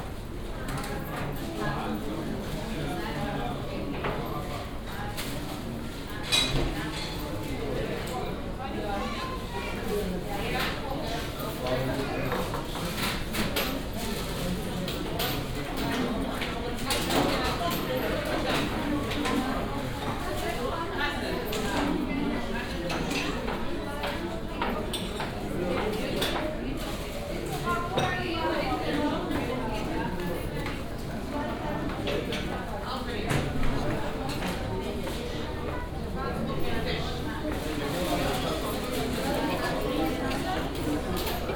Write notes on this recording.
samstag, markt, discount bäckerei / saturday, market, discount bakery